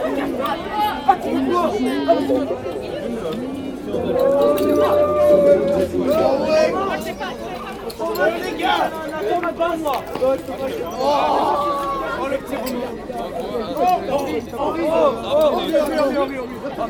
Namur, Belgique - Drunk people
On the wharf of the Sambre river, there's no easy access for policemen. They can't come with the car, so junkies come here. I took risks to make this recording, as I went really inside the groups. They drink very too much beer, smoke ganja, listen intellectual quarter-world music, shit and piss on the ground, fight... and ... sing ? sing ? OK sorry, rather bawl they put their bollocks in my tears (truthful). Oh my god...
Namur, Belgium, 23 November, 18:30